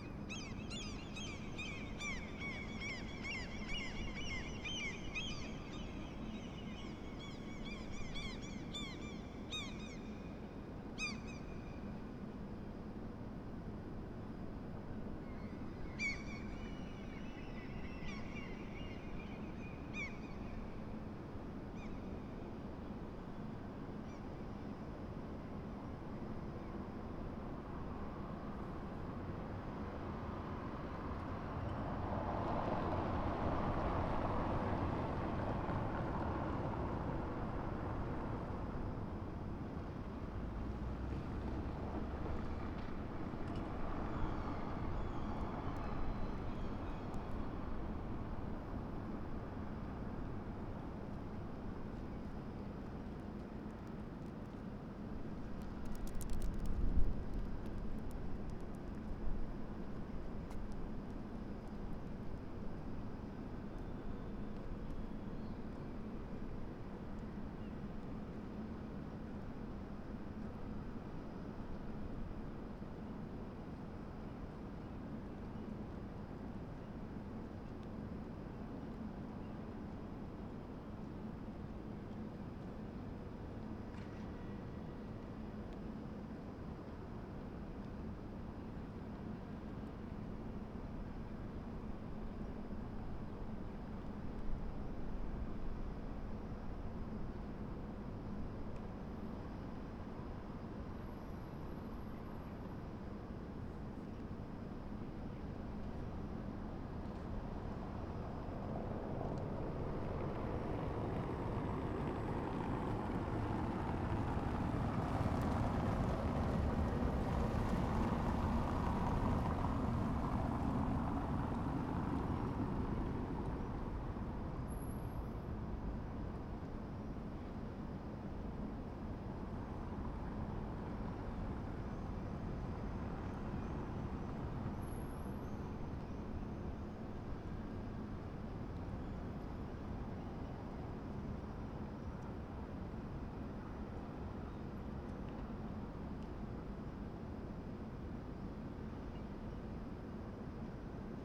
Kruununhaka, Helsinki, Finland - Square ambience
Seagulls with square space echo, some cars passing by.
14 July 2013, ~07:00